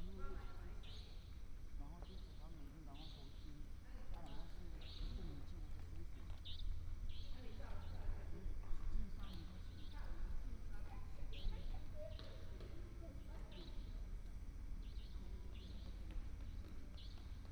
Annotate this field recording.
Square outside the station, Station Message Broadcast, Traffic sound, gecko, Dog barking, People walking in the square, birds sound